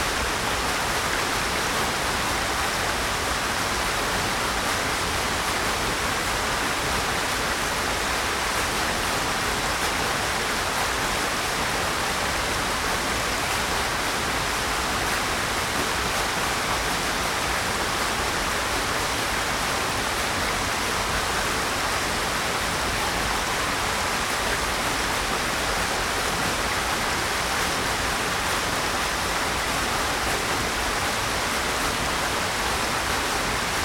Gorenja Trebuša, Slap ob Idrijci, Slovenija - Mkcova ravine - third waterfall
The third of fifteen waterfalls in Mkcova ravine. Zoom H5 with LOM Uši Pro microphones.
18 January, 11:34